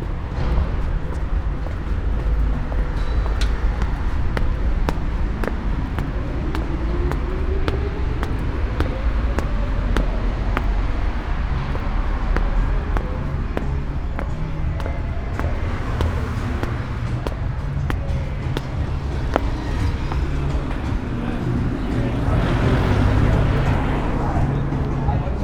U-bahn station, Rosa Luxemburg Platz, Mitte, Berlin, Germany - walking, silence, train comes
Sonopoetic paths Berlin